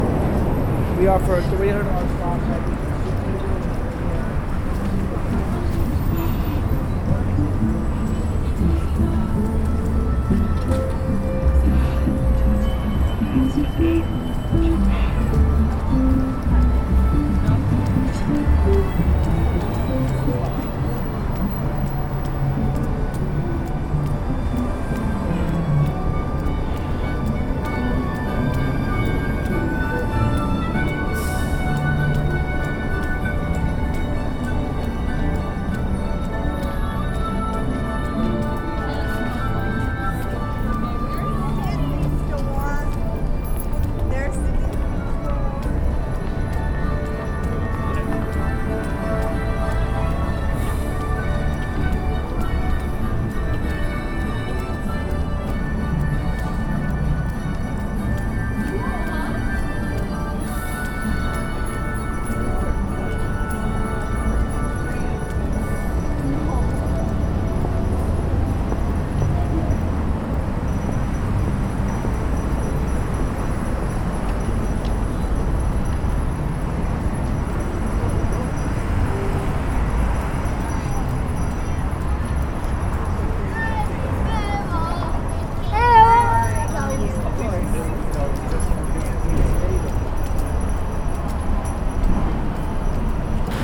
A stroll down State street in front of Macy's
IL, USA, 28 November 2013, 12:00